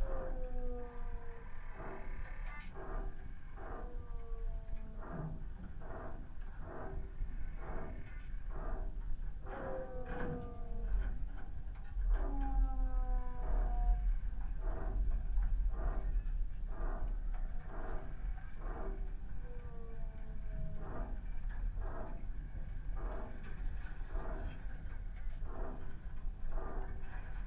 Biliakiemis, Lithuania, the barbed wire
contact microphone on a loop of barbed wire found in a meadow